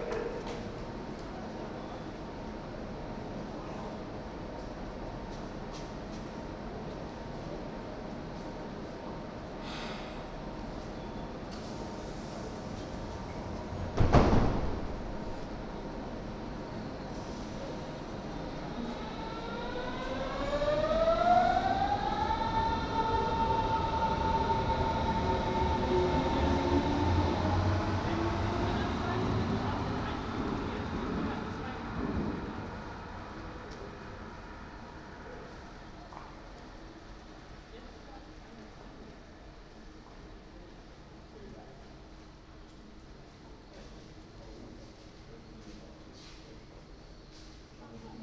For my multi-channel work "Ringspiel", a sound piece about the Ringbahn in Berlin in 2012, I recorded all Ringbahn stations with a Soundfield Mic. What you hear is the station Schönhauser Allee at noon in June 2012.
Deutschland, 20 June, 12:30